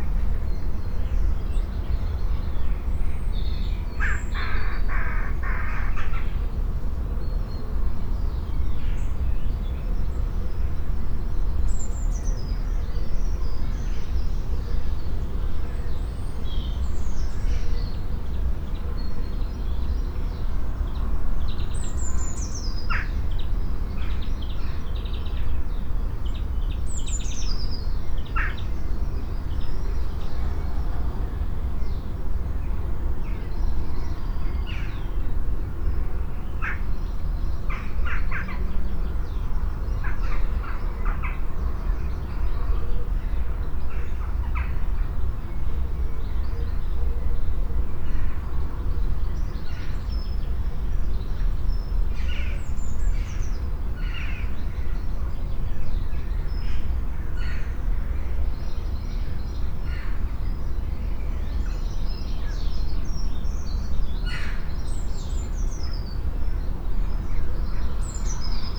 Malvern Wells, Worcestershire, UK - 5am
An experiment with longer recordings allowing the listener to engage more completely with the location.
MixPre 6 II with 2 x Sennheiser MKH 8020s.